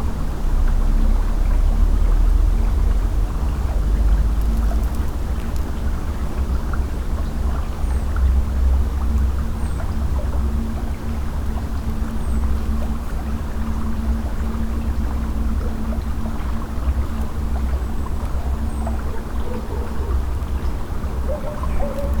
River song.Pušyno g., Utena, Lithuania - River song
28 September 2018